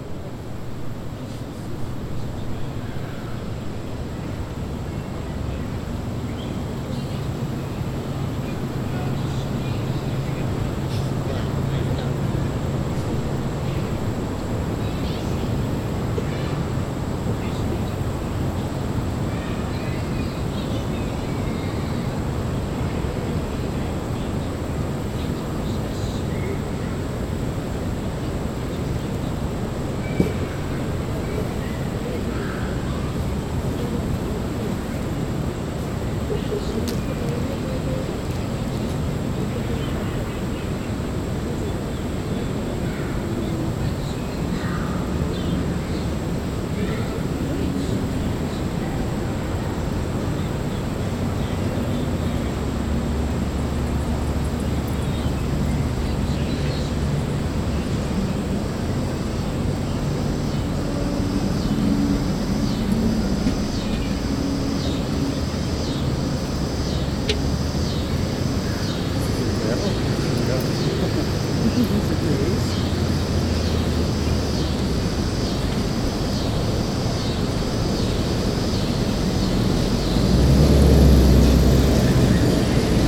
Rottenwood Creek Trail, Atlanta, GA, USA - Busy River Park
A recording of a busy trailhead taken in the middle of a patch of grass. Some people were camped out on the greenspace and others were walking. A few people passed the recording rig by foot and the sound of vehicles driving in the background is prominent. The insects were particularly active today. A child ran up to the recorder right before the fade.
Recorded with the Tascam DR-100 mkiii. Some minor eq was done in post.
Georgia, United States of America, 13 September 2020